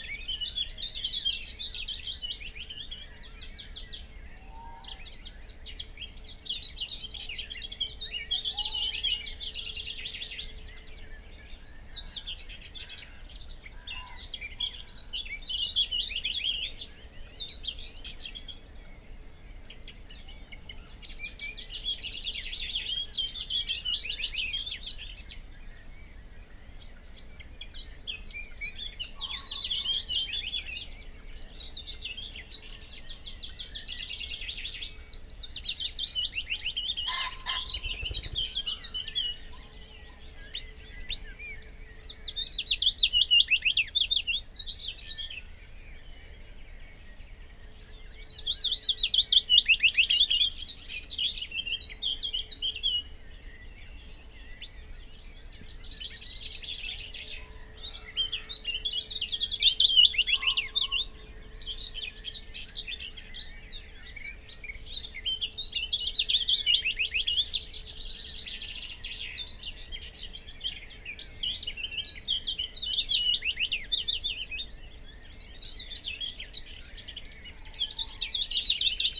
Willow warbler song and call soundscape ... open lavaliers clipped to branch ... songs and calls from ... tawny owl ... common pheasant ... crow ... magpie ... garden warbler ... whitethroat ... yellowhammer ... song thrush ... blackbird ... dunnock ... wren ... some background noise ...
Malton, UK, 15 May